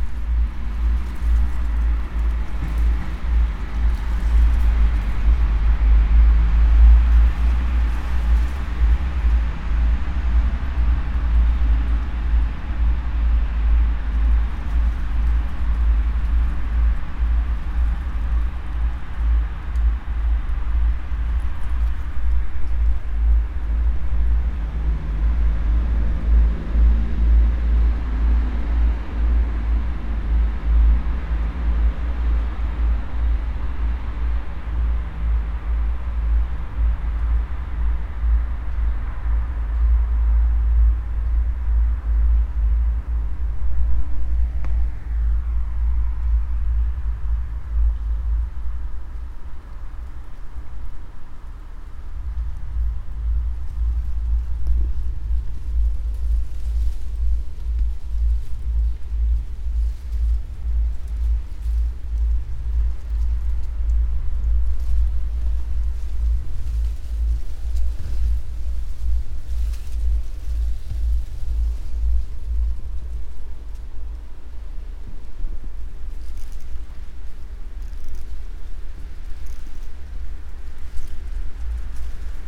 while windows are open, Maribor, Slovenia - bamboos, curtain, paper
bamboos, curtain and japanese paper, moved by wind, night traffic ambiance, passersby